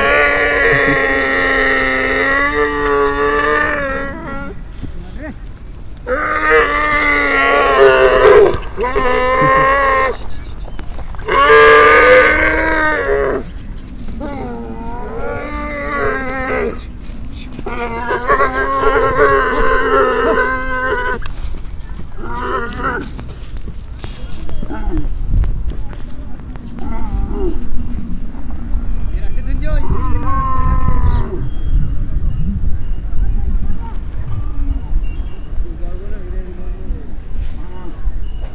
Camels at Pushkar rec. by Sebcatlitter